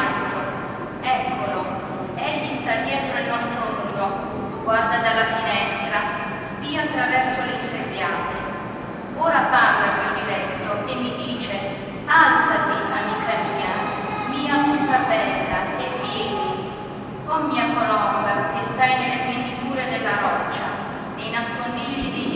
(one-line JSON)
{"title": "Altamura BA, Italie - a wedding ceremony", "date": "2015-09-15 11:00:00", "description": "a wedding ceremony in the cathedral of Altamura", "latitude": "40.83", "longitude": "16.55", "altitude": "476", "timezone": "Europe/Rome"}